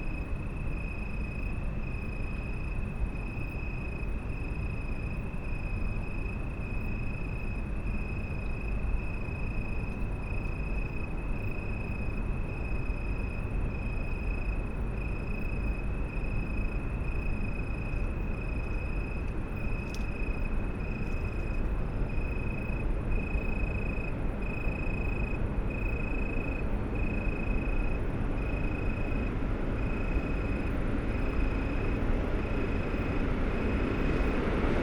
Mediapark, Köln - tree crickets, trains
place revisited, tree crickets have a different pitch tonight
(Sony PCM D50, Primo EM172)